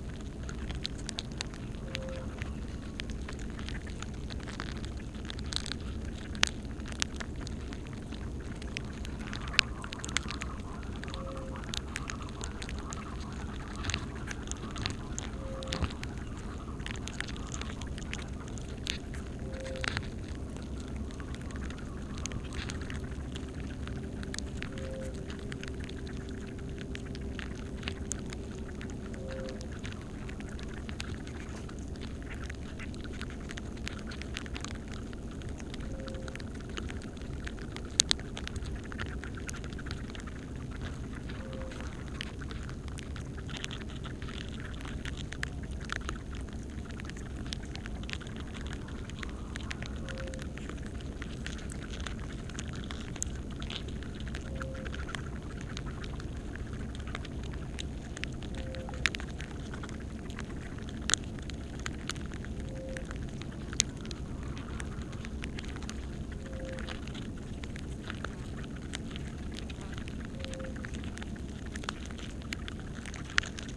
{"title": "Villavicencio, Meta, Colombia - From an ant's ear", "date": "2016-01-10 10:36:00", "description": "This recording was taken at the entrance of an ant nest. There's no further edition of any kind.\nZoom H2n with primo EM 172\nFor better audio quality and other recordings you can follow this link:\nJosé Manuel Páez M.", "latitude": "4.10", "longitude": "-73.36", "altitude": "269", "timezone": "GMT+1"}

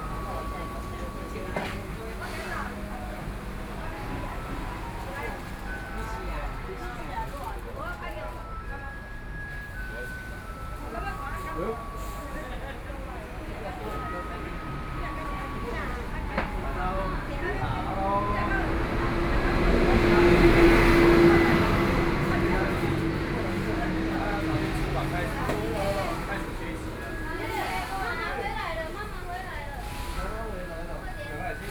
{"title": "北投區桃源里, Taipei City - At the roadside", "date": "2014-03-17 19:48:00", "description": "Traffic Sound, The elderly and children\nBinaural recordings", "latitude": "25.14", "longitude": "121.49", "timezone": "Asia/Taipei"}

